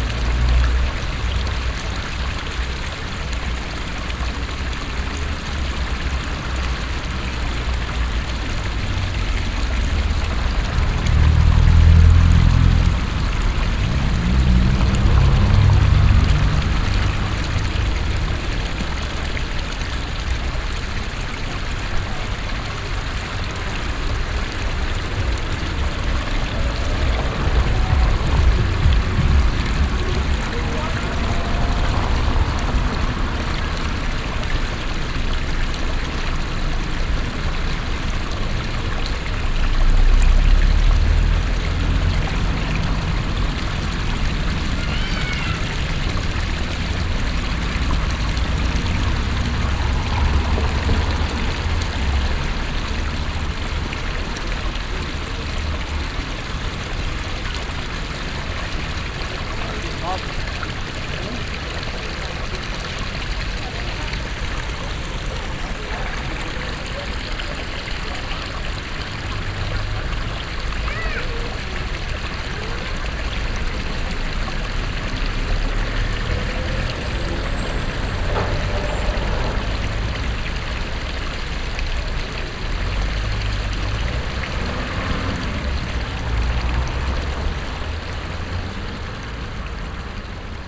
essen, viehofer street, fountain
Another modernistic kind of fountain construction, that can be found reguarly in the cities street. Water sound here firmly shadowed by the passing traffic and passenger.
Projekt - Klangpromenade Essen - topographic field recordings and social ambiences